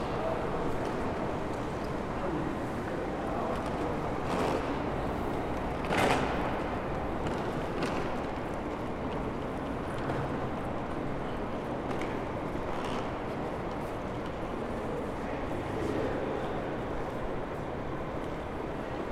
Frankfurt Hauptbahnhof 1 - 27. März 2020
Again friday, the week difference is nearly not audible. The hall is still emptier as usual, so some sounds are clearer as they would be, like a bottle on the floor.